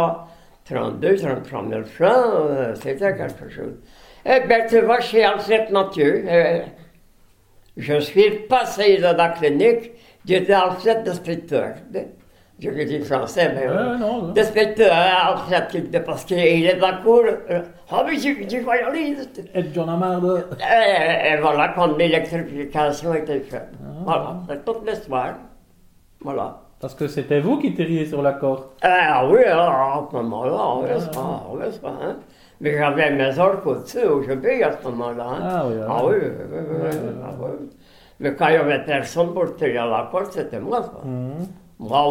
{"title": "Floreffe, Belgique - Old man memories", "date": "2010-12-14 16:00:00", "description": "An old man memories : Florimond Marchal. He tells a friend, Bernard Sebille, his old remembrances about the local bells. This old kind guy lost his set of false teeth, it was hard for him to talk. He went to paradise on 2011, sebtember 3.", "latitude": "50.44", "longitude": "4.76", "altitude": "87", "timezone": "Europe/Brussels"}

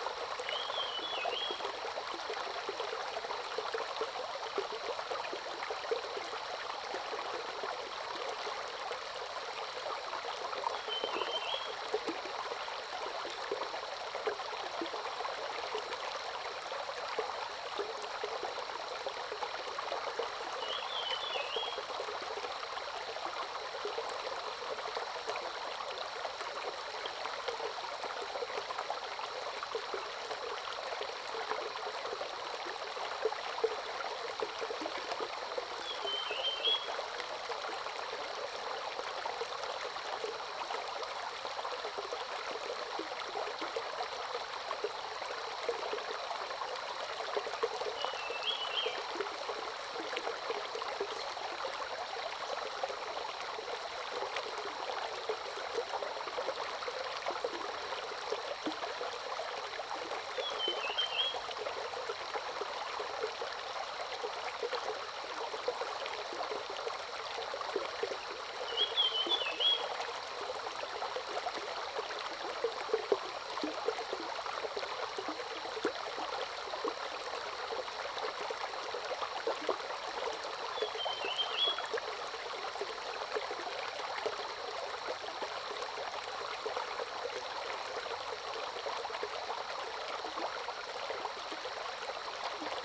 {"title": "中路坑溪, Puli Township - Bird and Stream", "date": "2015-06-11 05:37:00", "description": "Early morning, Bird calls, Brook\nZoom H2n MS+XY", "latitude": "23.94", "longitude": "120.92", "altitude": "492", "timezone": "Asia/Taipei"}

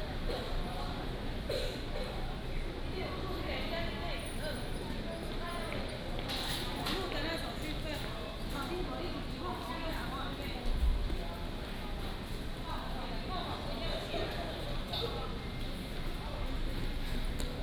{"title": "Xinying Station, Xinying District - Station Message Broadcast", "date": "2017-01-31 15:51:00", "description": "At the station hall, Station broadcasting", "latitude": "23.31", "longitude": "120.32", "altitude": "17", "timezone": "GMT+1"}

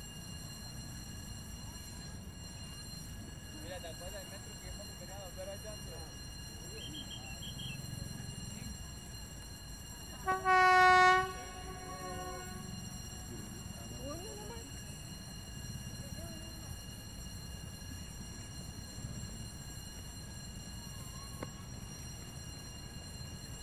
{"title": "AC 26 - AK 68, Bogotá, Colombia - Tren de la Sabana", "date": "2018-09-03 18:00:00", "description": "Ambiente del paso del tren de la sabana. Grabadora Tascam DR-40 Stereo X\\Y por Jose Luis Mantilla Gómez.", "latitude": "4.65", "longitude": "-74.10", "altitude": "2552", "timezone": "GMT+1"}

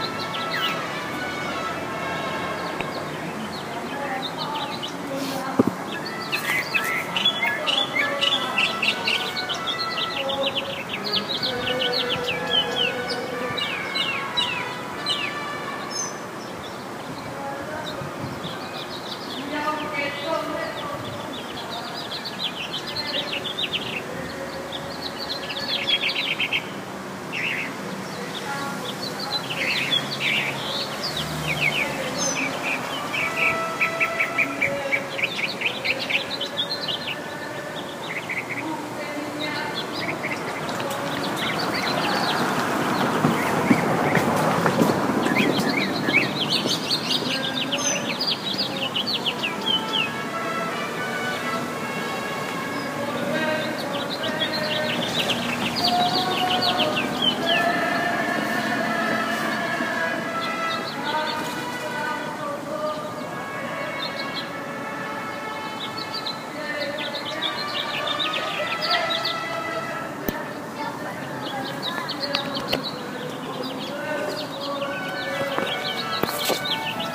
{"title": "Elysian Park, Academy Dr, Los Angeles, CA, USA - Norteño music and birds of Echo Park", "date": "2012-05-26 19:29:00", "description": "Memorial weekend festivities overheard while walking through Echo Park with Priyanka. Overlooking Dodger Stadium parking lot (one of the largest in the country) and Chavez Ravine, where Richard Neutra once had high hopes for a large social housing project.", "latitude": "34.07", "longitude": "-118.25", "altitude": "152", "timezone": "America/Los_Angeles"}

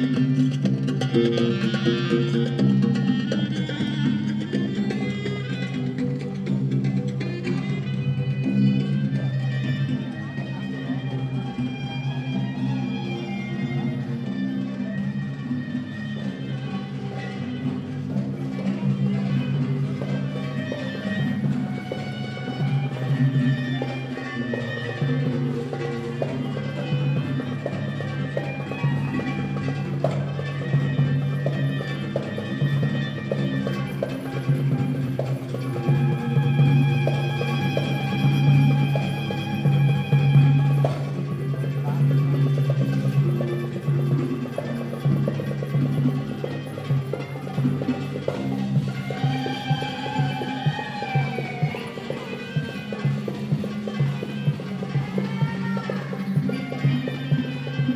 {"title": "Havana, Cuba - Evening walk in La Habana Vieja", "date": "2009-03-20 20:30:00", "description": "Early evening walk in Old Havana, including belly dance (!) performance in Plaza de la Cathedral.", "latitude": "23.14", "longitude": "-82.35", "altitude": "10", "timezone": "America/Havana"}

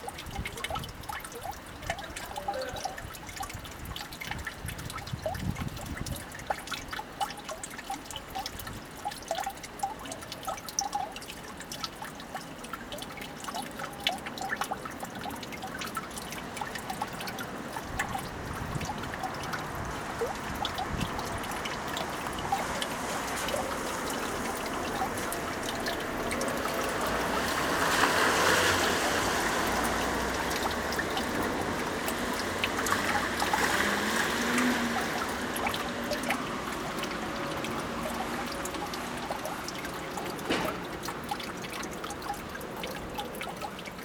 {"title": "Milano, Italy - rain collected in the hole", "date": "2012-11-10 13:00:00", "description": "rain in a quiet street collected in a manhole", "latitude": "45.48", "longitude": "9.22", "altitude": "124", "timezone": "Europe/Rome"}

